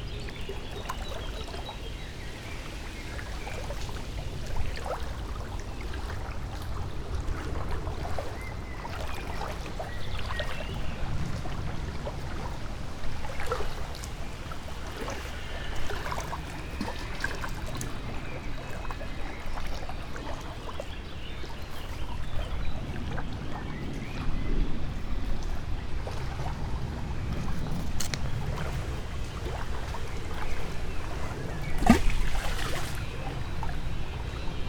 Mariborski otok, river Drava, tiny sand bay under old trees - waves
bright green lights, wave writings change rapidly as winds stirs water flow, it is gone with another before you notice